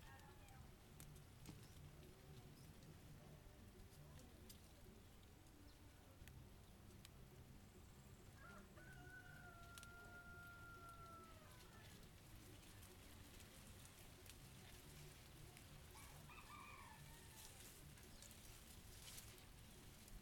San Vicente, Antioquia, Colombia - The wind that shakes the Guaduas
Field record made in rural areas close to San Vicente, Antioquia, Colombia.
Guadua's trees been shaked by the wind.
Inner microphones Zoom H2n placed 1m over the ground.
XY mode.